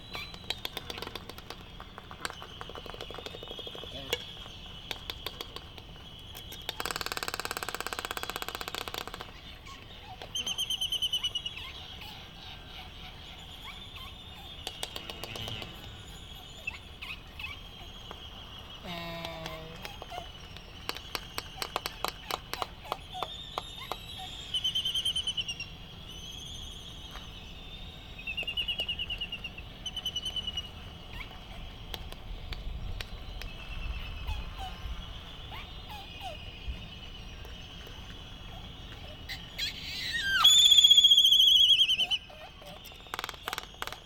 United States Minor Outlying Islands - Laysan albatross dancing ...
Sand Island ... Midway Atoll ... laysan albatross dancing ... calls from white terns ...Sony ECM 959 one point stereo mic to Sony Minidisk ... warm sunny breezy morning ... background noise ...